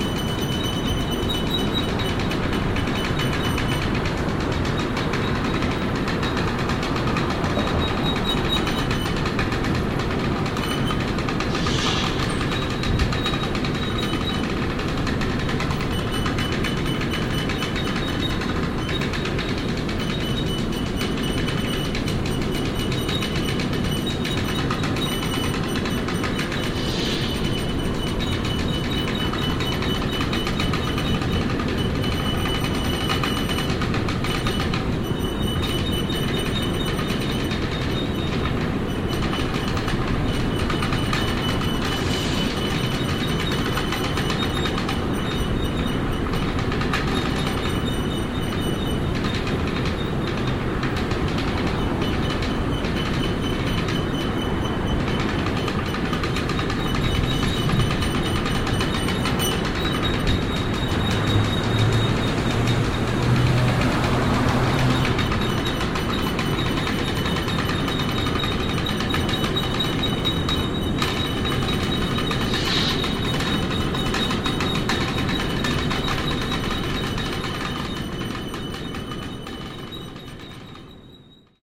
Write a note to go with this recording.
Overhead belt conveyor transporting sintered iron ore from Arcelor's Ougrée sintering plant to the blast furnace in Seraing. The noise of this conveyor used to be one of the most characteristic features in the area and has even been immortalised in the soundtracks of several films by the Frères Dardenne. Binaural recording. Zoom H2 with OKM ear mics.